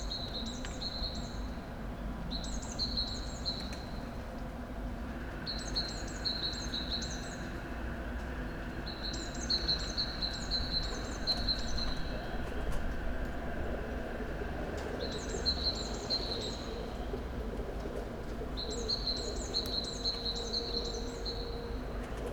from/behind window, Mladinska, Maribor, Slovenia - winter disappears